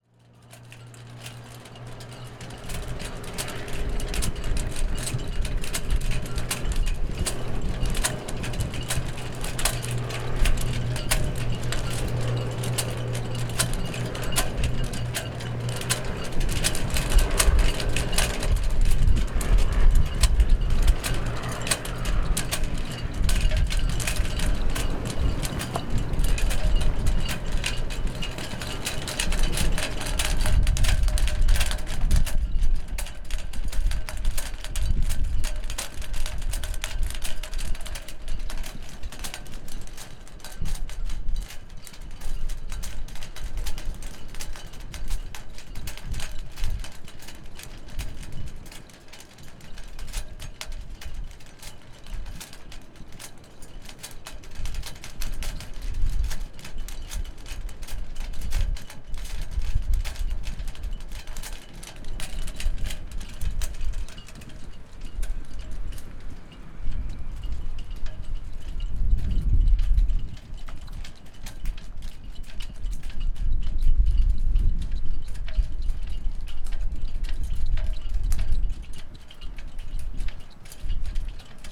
Boulevard des Émigrés, Quiberon, Frankrijk - Sailboats
The sound of the port of Quibéron - sailboats in the wind. (Recorded with ZOOM 4HN)